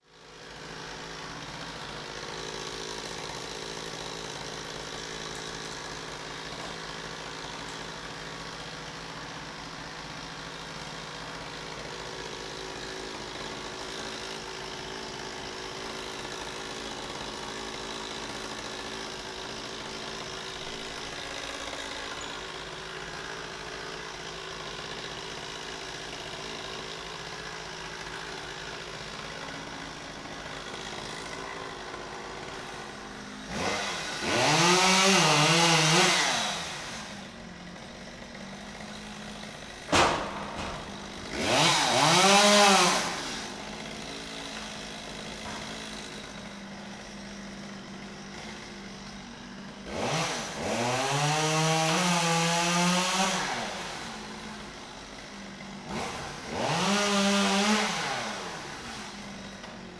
Berlin Bürknerstr., backyard window - workers, chain saw
workers cutting branches from a tree
(Sony PCM D50)
10 September 2014, 13:15